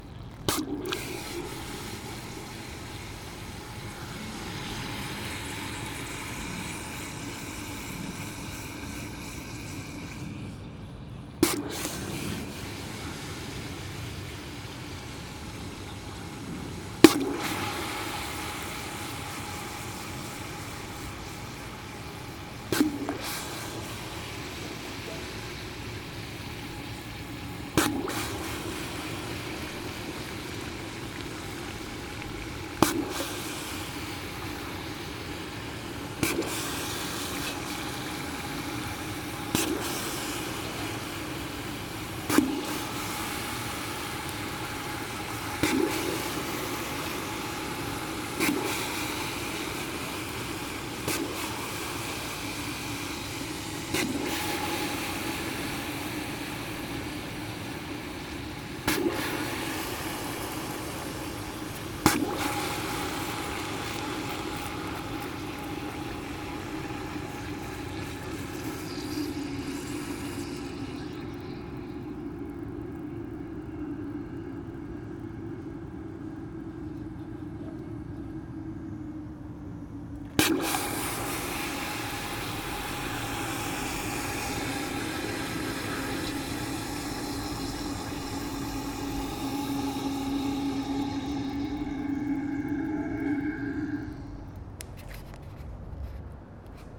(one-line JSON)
{"title": "East Garfield Park - hot coals dropped into water", "date": "2010-05-30 20:07:00", "description": "summertime sound of hot coals from the barbecue being dropped into a bucket of cold water", "latitude": "41.89", "longitude": "-87.71", "altitude": "186", "timezone": "America/Chicago"}